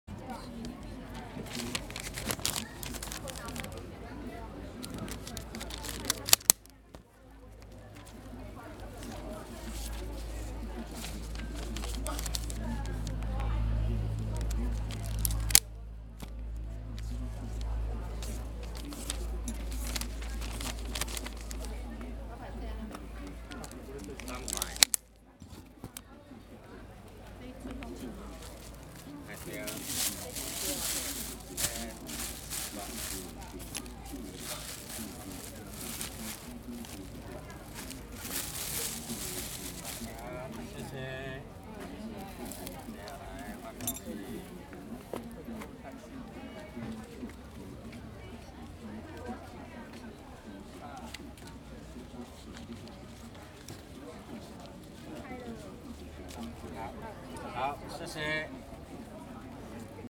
Namen holiday flower market - Packing with the newspaper
Packing with the newspaper in the market. 老闆使用報紙包裝盆栽
Tainan City, Taiwan, 3 May 2014